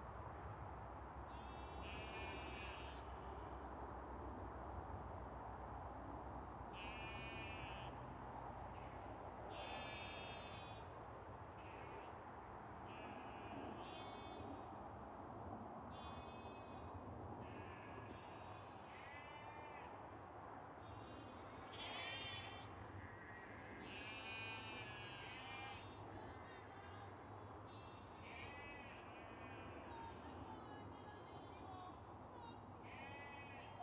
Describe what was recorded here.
I was invited by a friend at the Berkshire Guild of Weavers, Spinners and Dyers to help out on shearing day. This flock is a conservation grazing flock featuring Shetlands, Jacobs, Black Welsh Mountains, Herdwicks... possibly some other ones? I was very late and when I arrived the shearers had done most of the sheep already, I hung my microphones in a tree to record the last few, and you can hear the clippers; the sheep all going crazy because the lambs and ewes don't recognise each other so easily after the ewes have been sheared; the nearby road; wind in the trees; insects and then the shearers packing up their stuff and driving home. You can also hear us sorting the fleeces, bagging up any that handspinners might like and chucking all the worse ones into a sack for the Wool Marketing Board to collect. Our voices echo in a really strange way because it's such a long, open field.